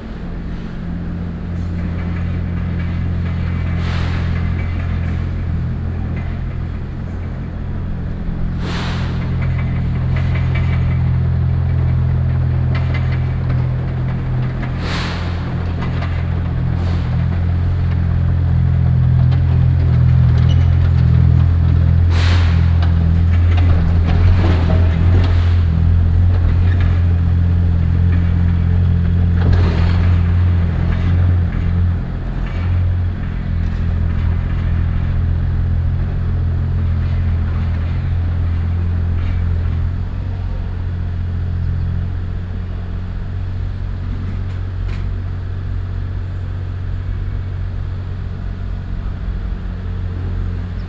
Engineering Noise, Zoom H4n+ Rode NT4
New Taipei City, Taiwan, June 2012